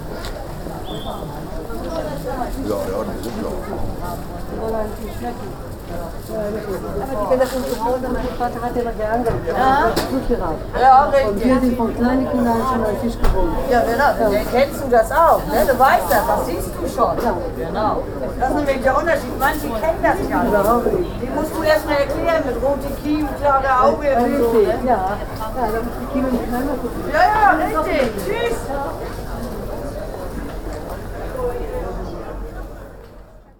Fischgespräch. Markt Große Bergstraße. 31.10.2009 - Große Bergstraße/Möbelhaus Moorfleet
Imbiss zum Backhus/Markt Große Bergstraße